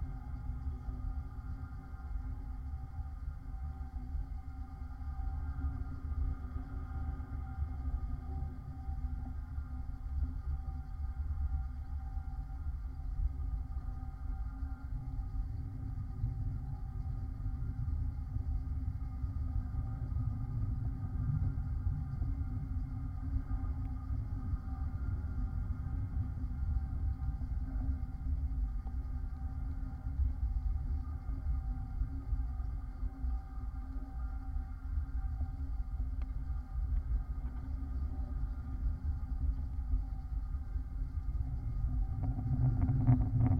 Kaliningrad, Russia, long supporting wire
contact microphone on a long supporting wire of bridge's construction